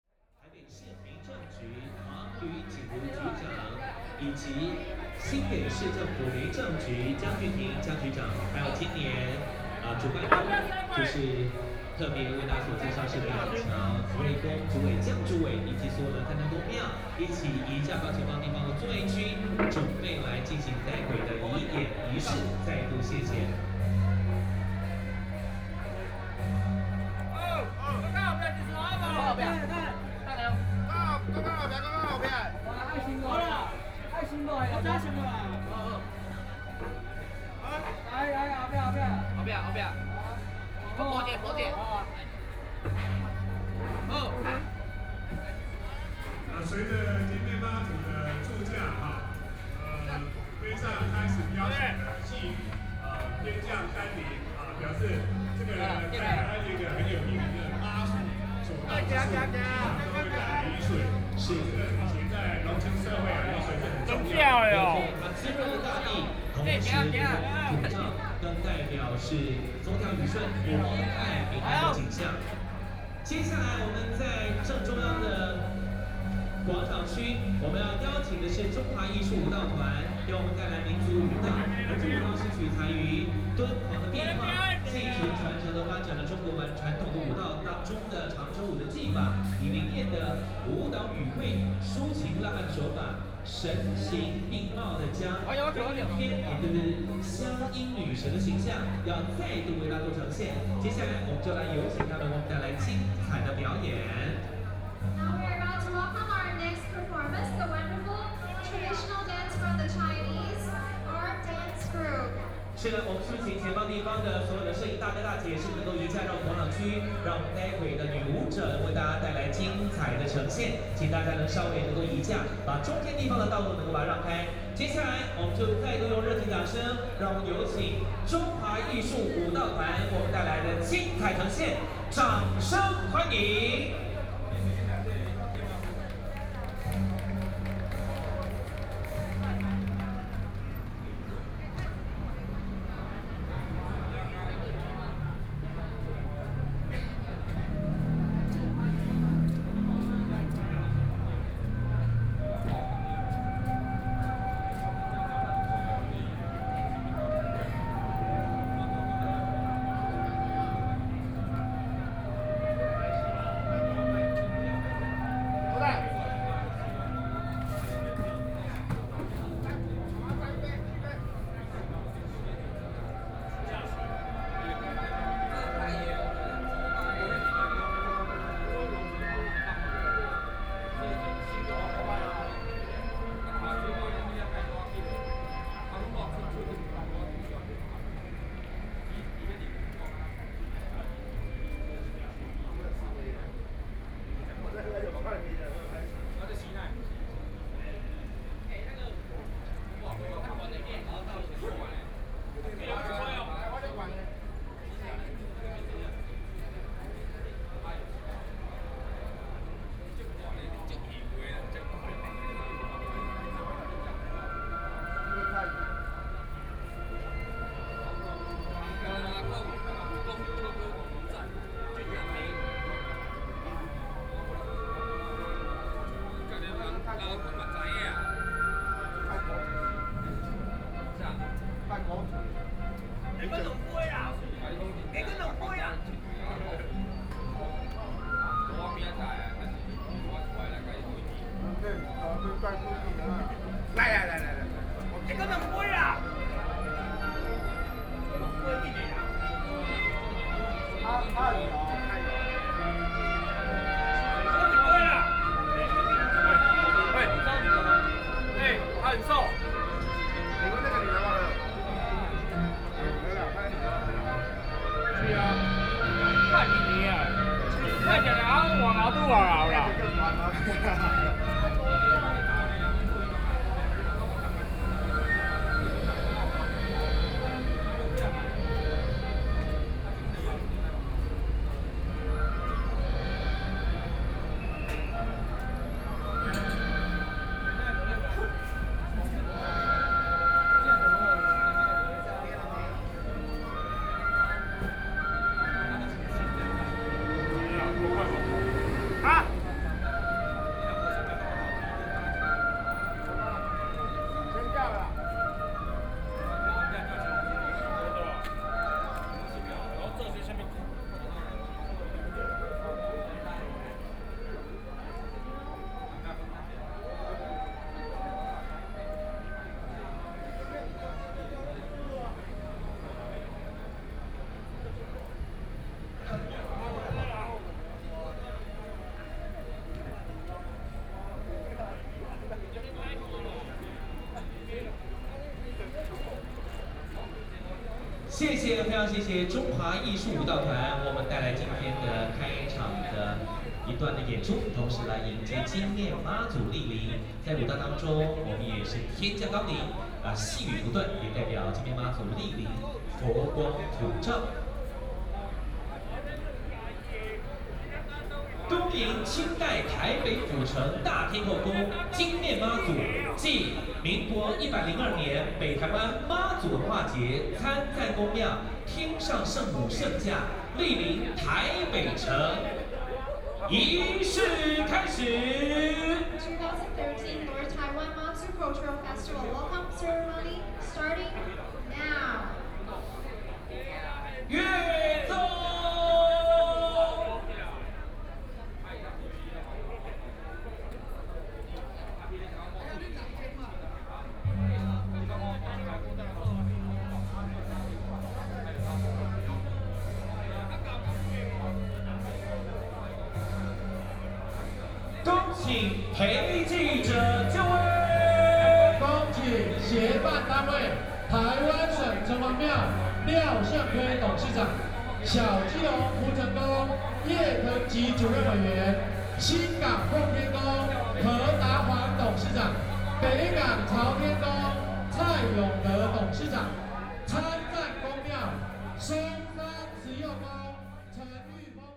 Traditional temple festivals, Binaural recordings, Zoom H6+ Soundman OKM II
Zhongzheng District, Taipei City, Taiwan